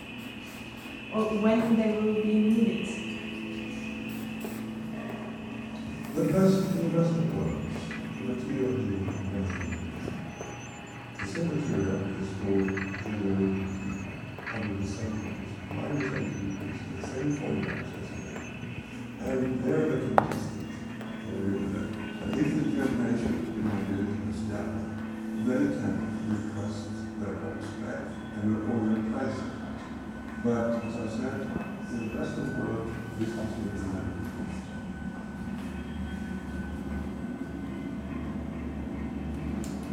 Center for PostNatural History, Pittsburgh - Cold Coast Archive exhibit ambience
Sounds from audio and video installations from the Cold Coast Archive project, featuring the Svalbard global Seed Vault (with background Center ambience).
PA, USA